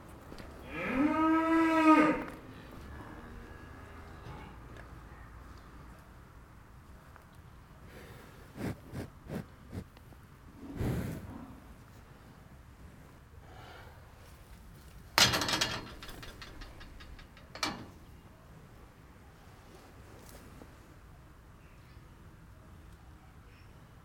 Court-St.-Étienne, Belgique - The cows
We are in a quiet rural farm. Cows are hungry as always. Seeing the farmer, they are asking for food. Many thanks to Didier Ryckbosh, the farmer, to welcome me here.